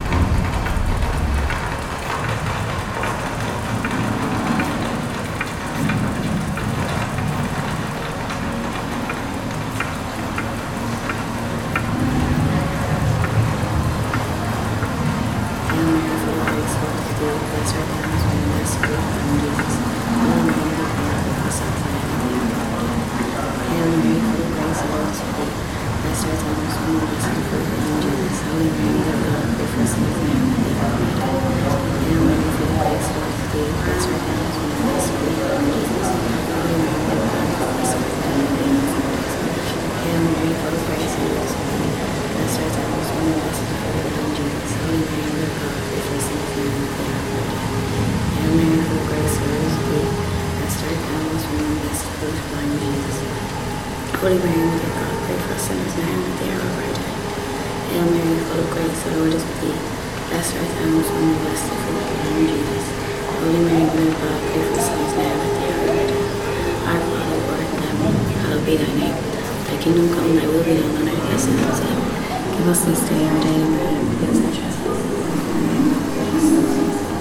Castello, Venezia, Italy - Confusion at Biennale di Venezia. Creepy.
Binaural recordings. I suggest to listen with headphones and to turn up the volume.
Just shot a "sound-photograph" of this place, during one of the most important Festivals of Art and Architecture of the world. I find it a bit creepy.
Recordings made with a Tascam DR-05 / by Lorenzo Minneci
November 2016